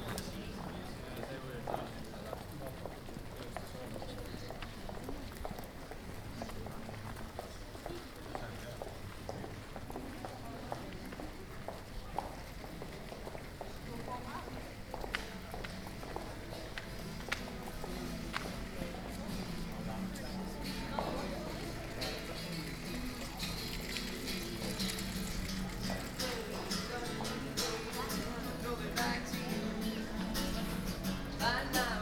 Short stroll through the touristic centre of Brugge.
Zoom H2 with Sound Professionals SP-TFB-2 binaural microphones.